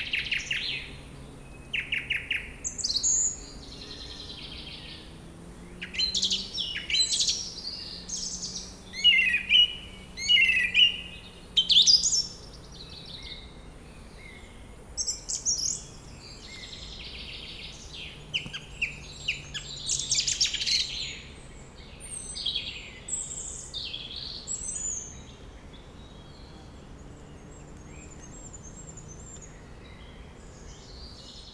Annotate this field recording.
Une grive musicienne entourée d'un rouge gorge et autres oiseaux, entre deux passages d'avions!